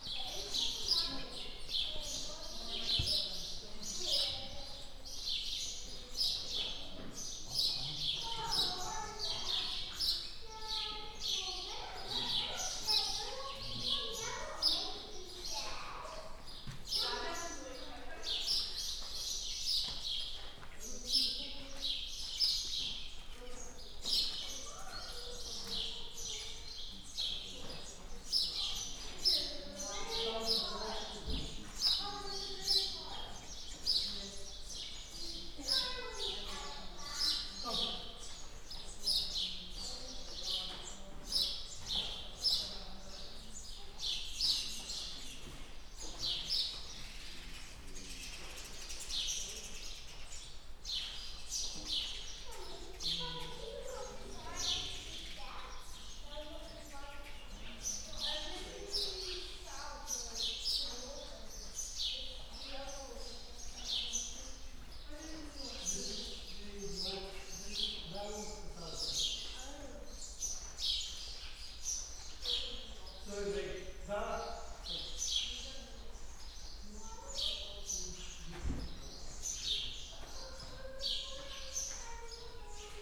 L-Mnajdra temple, Malta - sparrows under tent
L-Mnajdra temple, Malta, the place is covered by a big tent, which protects not only the temple against erosion, but also gives shelter to many sparrows.
(SD702, DPA4060)